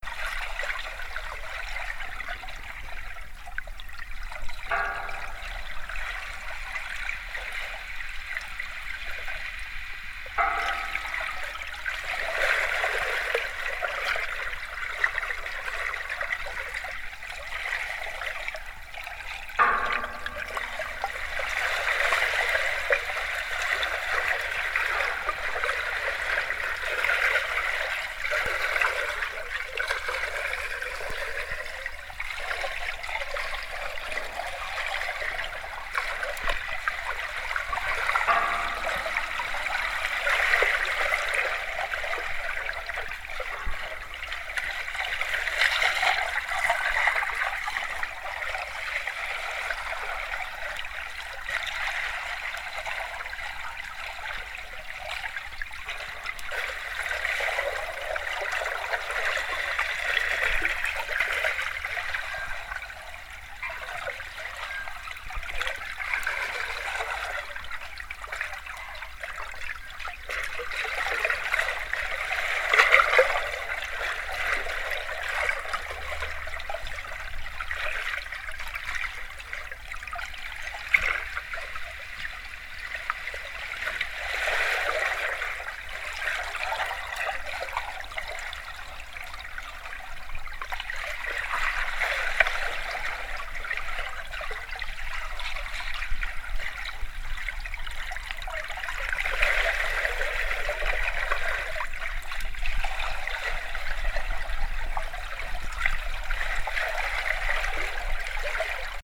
Náplavka, Underwater sounds of Vltava - feeding of gulls
Feeding of gulls and swans recorded with underwater microphone in the freezy afternoon in Smichov.
23 November 2008, ~18:00